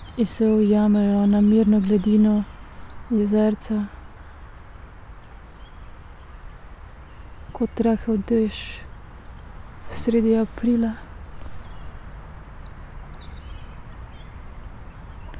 stump, little island, river drava, melje - sitting poem
spoken words, sunday spring afternoon
Malečnik, Slovenia, 6 April 2014